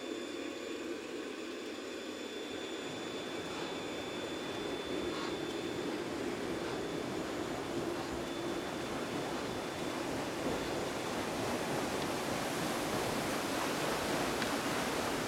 Meggenhorn, Schweiz - Raddampfer
Anlegen eines Raddampfers am Steg.
Juni 2001
Tascam DA-P1 / 1. Kanal: TLM 103, 2.